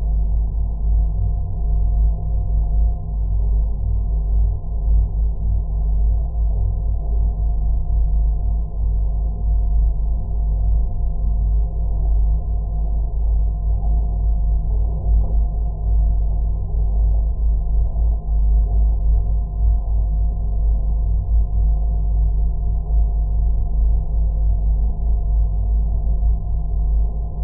M/S Jaarli sailing on the river Aura in Turku. Recorded with LOM Geofón attached with a magnet to a thin horizontal metal bar near the bow of the boat. Zoom H5.